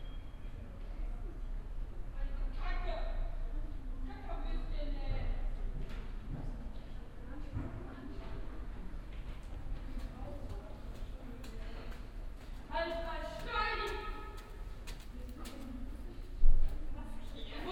at the main station on midday - the emptyness of the gangway underneath the tracks, a train passing by, some schoolgirls parloring
soundmap nrw - social ambiences and topographic field recordings